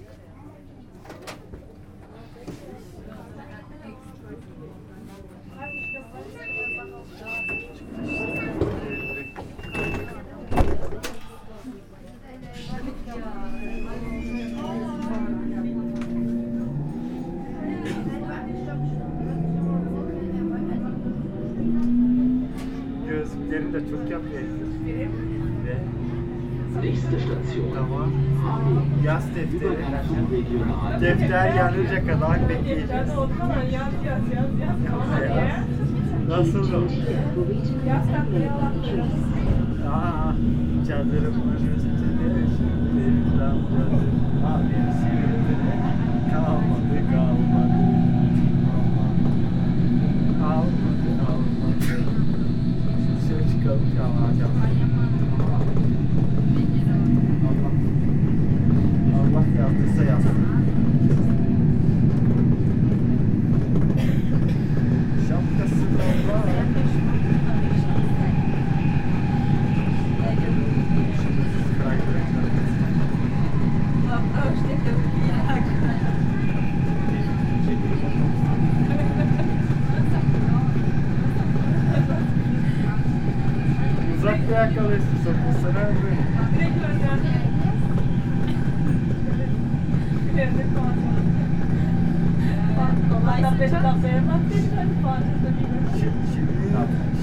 Neuwiedenthal, Hamburg, Deutschland - The S3 Buxtehude and stade train
The train going to Neuwiedenthal on evening. Some turkish people talking loudly and an angry woman with a bike.